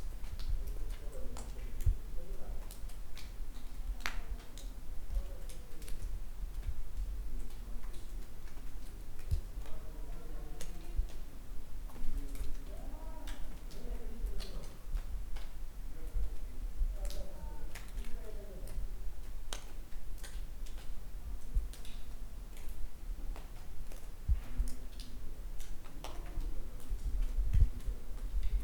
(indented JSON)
{
  "title": "Ku Dziurze valley, Dziura Cave, water drops",
  "date": "2011-09-05 18:02:00",
  "latitude": "49.27",
  "longitude": "19.94",
  "altitude": "1040",
  "timezone": "Europe/Warsaw"
}